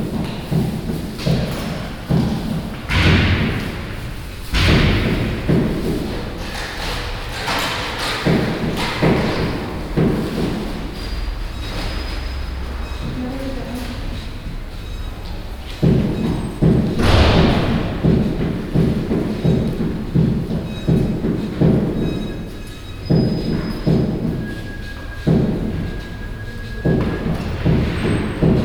Old Town, Klausenburg, Rumänien - Cluj-Napoca - old post office
Inside the old post office. The general atmosphere of the big and high, nearly empty cental room. The sound of the wooden swing door, an electronic machine, people talking and the sound of a post office worker stamping envelopes.
soundmap Cluj- topographic field recordings and social ambiences